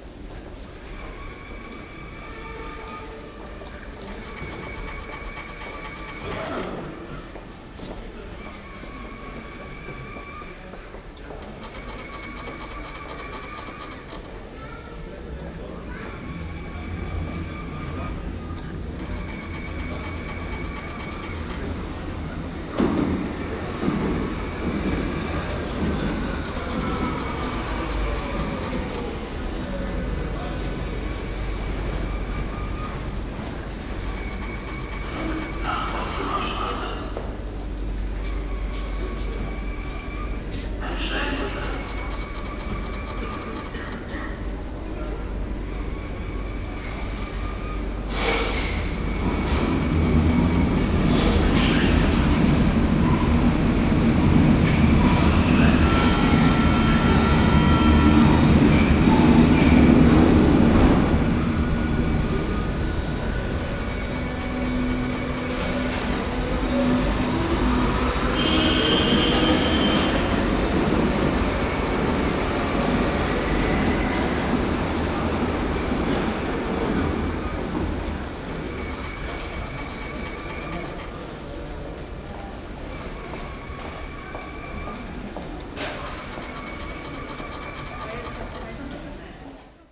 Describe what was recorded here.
21.10.2008 15:30: rotierende Werbeplakate im U-Bahnhof Hermannplatz. advertisement posters rotating